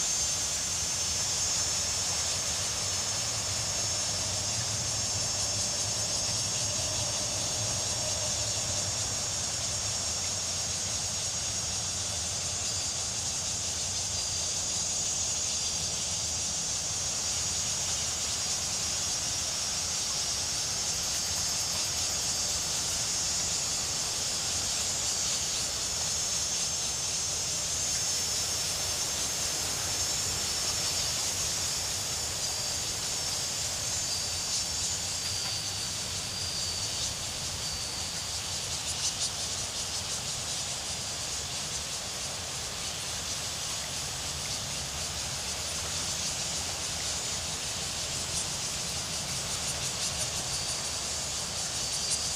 Shangying Street, Xiangshan District, Hsinchu City, Taiwan - Insects at Grasslands Meadow
Cicadas and other insects in the meadow area, at the southeast end of Grasslands park. Some insects occasionally make a high-pitched chiming sound. Stereo mics (Audiotalaia-Primo ECM 172), recorded via Olympus LS-10.
10 August, ~19:00